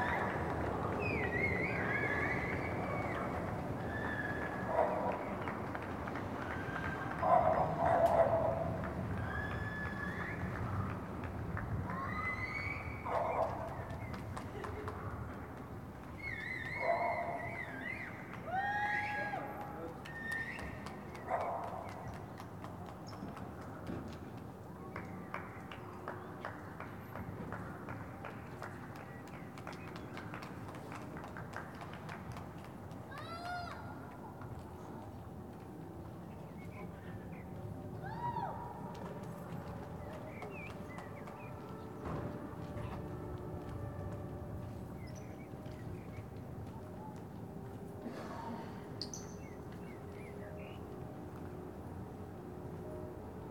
Reuterstrasse: Balcony Recordings of Public Actions - Public Clapping Day 02
Recorded from my balcony on a Sony PCM D100
Deutschland